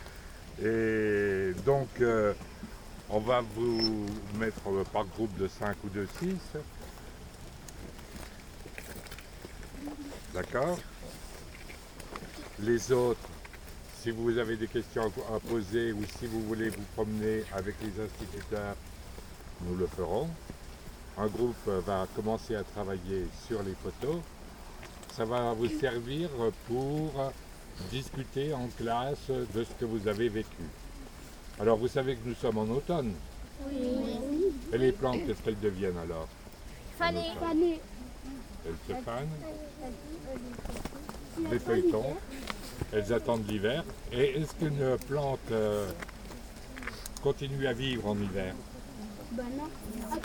Champs à Nabord aux Panrées - Cornimont, France
26 October 2012